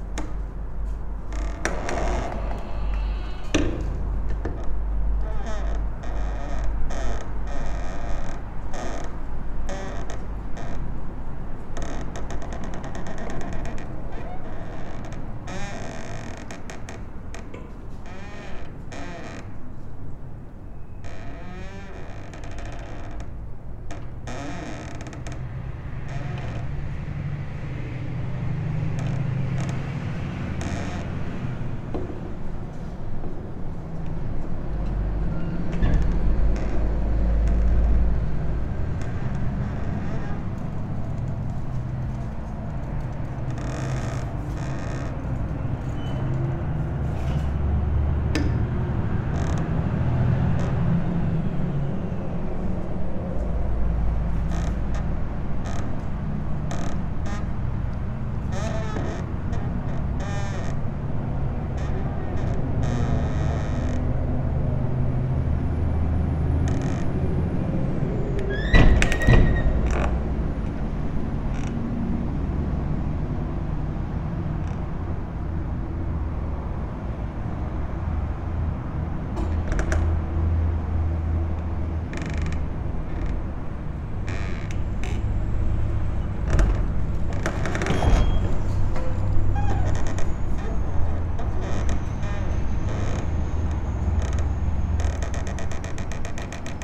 Antalgė, Lithuania, abandoned school entrance door
abandoned school: entrance door swaying in the wind
Utenos rajono savivaldybė, Utenos apskritis, Lietuva, 24 February 2020, 15:55